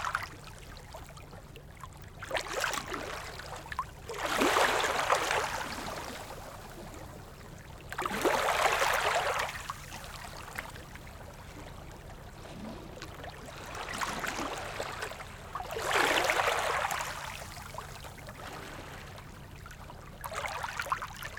Kalles gränd, Degerhamn, Sverige - Degerhamn small waves sandy beach.
Degerhamn, sandy beach small dynamic waves. Recorded with zoom h6 and Rode ntg3. Øivind Weingaarde.